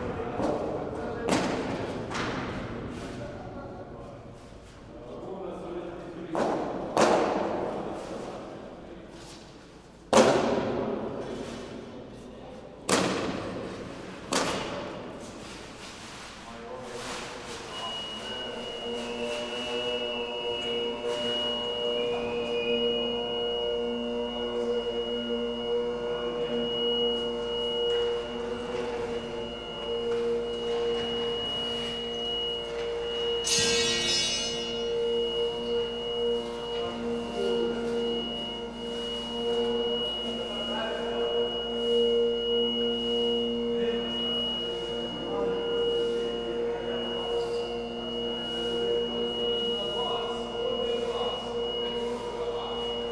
Matulji, Croatia, Sport Venue - Under Construction